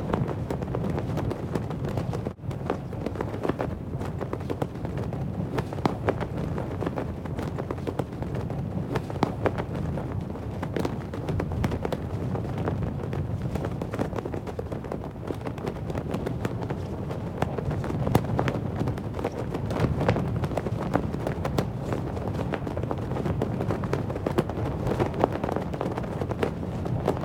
Muhlenberg College Hillel, West Chew Street, Allentown, PA, USA - Flag
Flag waving in the wind
5 December, ~08:00